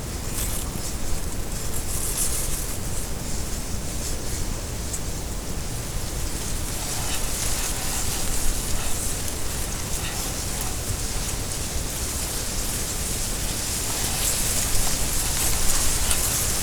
Lithuania, Utena, reed in wind
on the frozen marsh. I placed the mic amongst the whispering reed
7 March 2011